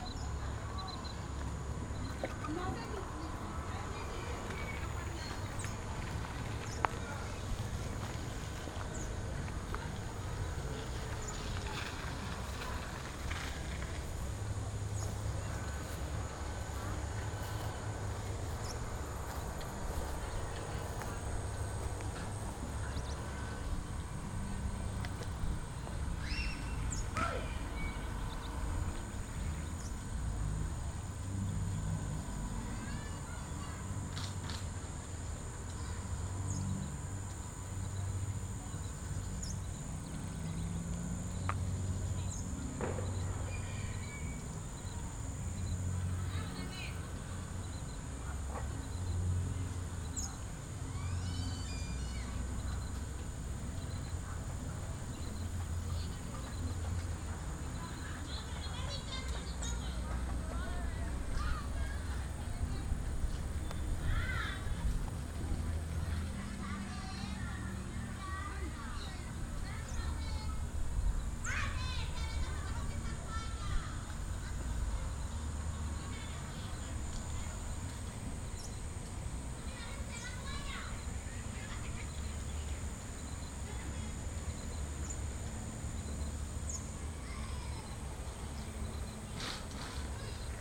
Chigorodó, Chigorodó, Antioquia, Colombia - Deriva sonora alrededor del Colegio Laura Montoya
Soundwalk around Laura Montoya School. Midday, windy and warm.